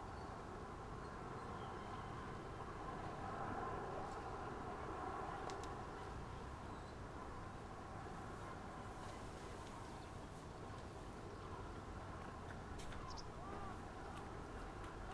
seagulls, shy waves, car traffic from afar ... morning sounds at the sea side